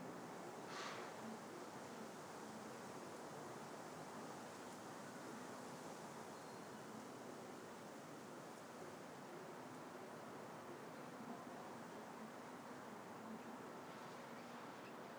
London, near Oval Underground Station UK - Mowli Street Sounds
Recording made at 22:00 using a shotgun microphone, cloudy, a lot of planes flying over head in various directions (Too and from Heathrow airport?)
It has been raining all day, but now its calmer but there are still grey clouds above.
14 June 2016, 22:00